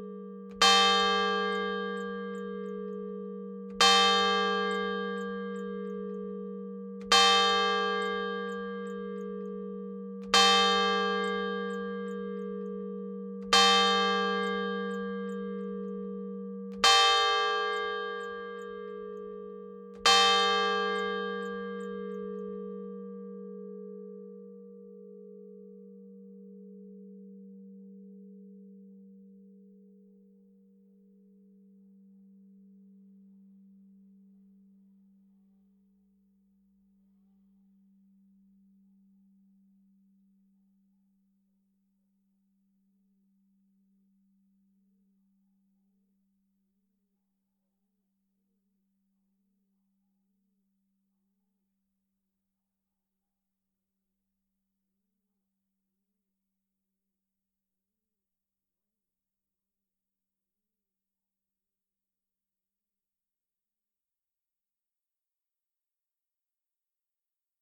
Rue Jean Jaurès, Haspres, France - Haspres - Département du Nord église St Hugues et St Achere - Tintements cloche Aîgüe.
Haspres - Département du Nord
église St Hugues et St Achere
Tintements cloche Aîgüe.